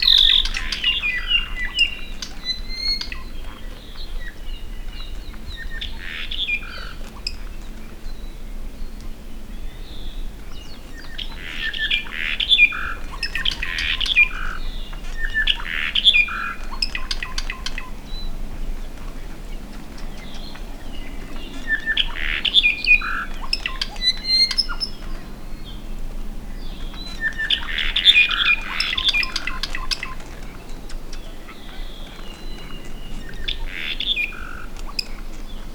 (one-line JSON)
{"title": "Hosmer Grove, Haleakala NP, Maui", "date": "2011-12-02 10:30:00", "description": "Apapane (bird endemic to Hawaii) singing in tops of trees.", "latitude": "20.77", "longitude": "-156.24", "altitude": "2045", "timezone": "Pacific/Honolulu"}